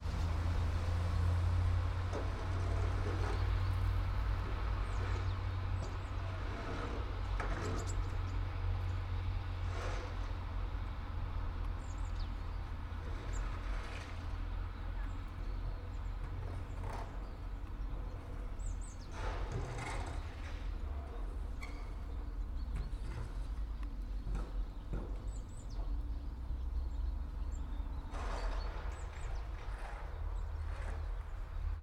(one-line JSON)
{"title": "all the mornings of the ... - feb 10 2013 sun", "date": "2013-02-10 09:39:00", "latitude": "46.56", "longitude": "15.65", "altitude": "285", "timezone": "Europe/Ljubljana"}